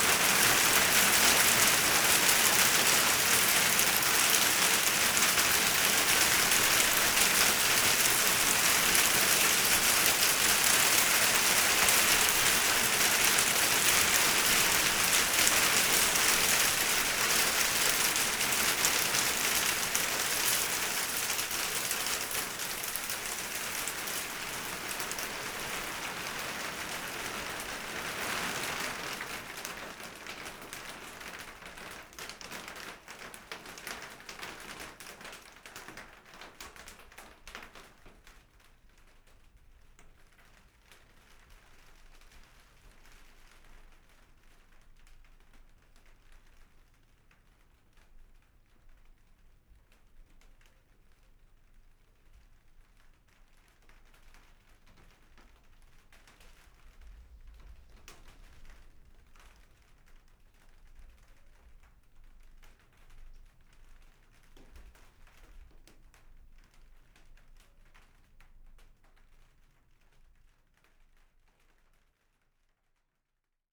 Port Carlisle, Cumbria, UK - Hailstorm
Hail falling on plastic roof. ST350 mic, binaural decode
England, United Kingdom, European Union